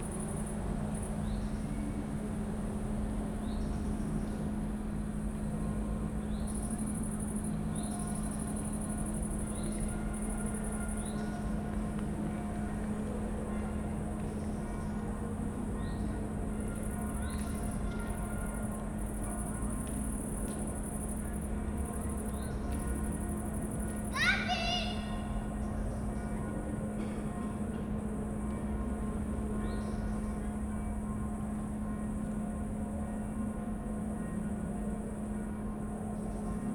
Maribor, Mestni park - multifaceted evening soundscape
amazing soundscape at Mestni park: crickets, people, distant soccer match, church bells, the hypnotic drones from the mill near Maribor station
(SD702 Audio Technica BP4025)
1 August 2012, 20:45